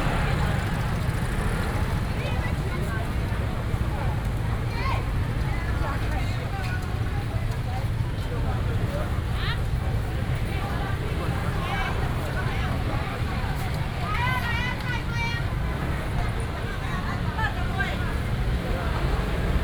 淡水清水巖, New Taipei City - Walking in the area of the temple
Walking through the traditional market, Walking in the temple, traffic sound
Tamsui District, New Taipei City, Taiwan, 16 April 2017, 09:27